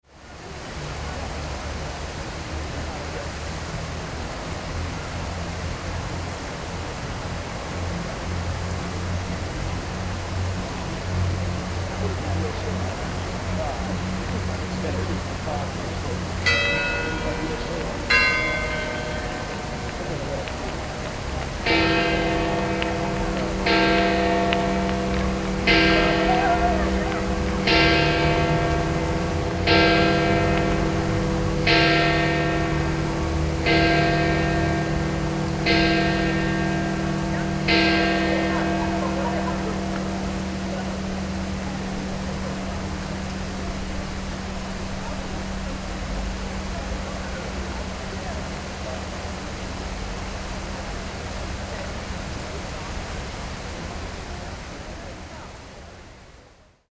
Piazza San Pietro - The evening at Piazza San Pietro
A nearby fountain and bells of San Pietro at Piazza San Pietro during a warm summertime evening.
Province of Rome, Vatican City, 2 July 2009